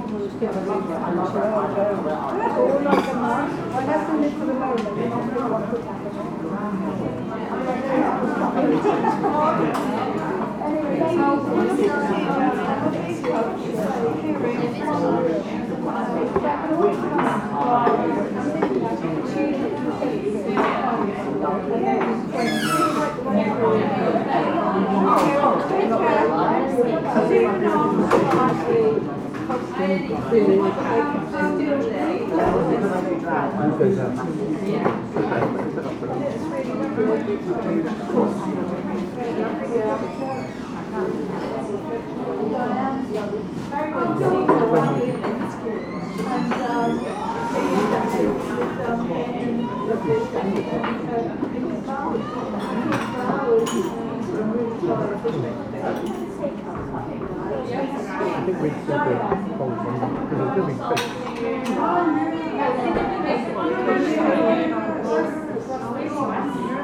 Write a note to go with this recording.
Street sounds with a guitarist busker then into a busy cafe for lunch. The coffee machine is in front and people at tables all around mostly on the left. There is some gentle low cut applied due to noisy fans. MixPre 6 II with two Sennheiser MKH 8020s